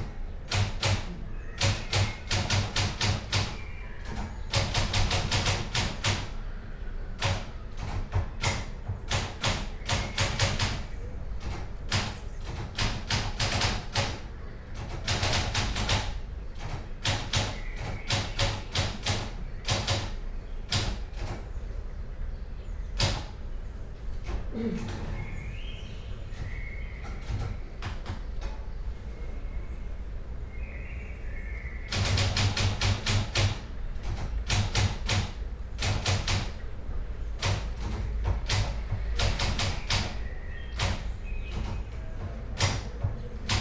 rewriting 18 textual fragments, written at Karl Liebknecht Straße 11, Berlin, part of ”Sitting by the window, on a white chair. Karl Liebknecht Straße 11, Berlin”
window, wind, typewriter, leaves and tree branches, yard ambiance
Vzhodna Slovenija, Slovenija